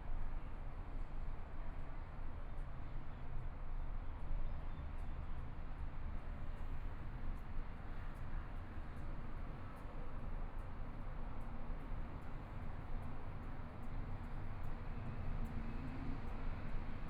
in the Park, Environmental sounds, Birds singing, Traffic Sound, Aircraft flying through, Tourist, Clammy cloudy, Binaural recordings, Zoom H4n+ Soundman OKM II
Zhongshan District, Taipei City, Taiwan, 10 February 2014, 16:26